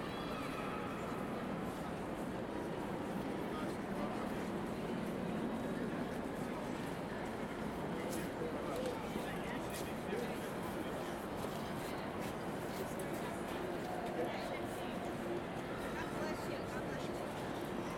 NYC, grand central station, main hall, pedestrians, hum of voices;
15 February 2014, 13:30